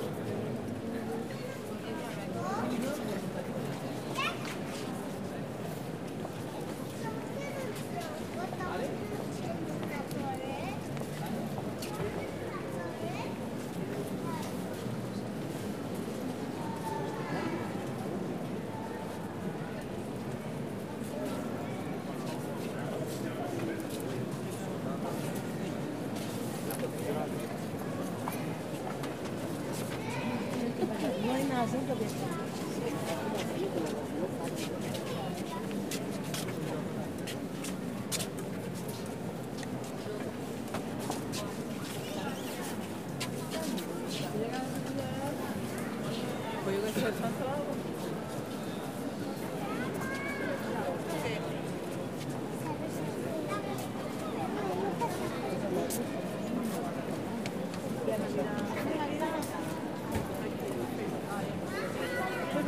Walking around in a crowd of visitors to the cathedral. The most interesting sounds are the footsteps.
Zoom H4n